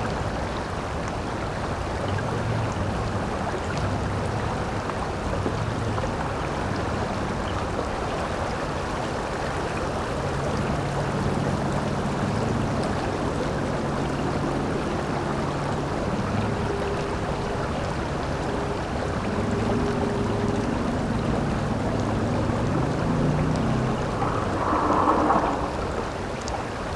Lithuania, Utena, river and city hum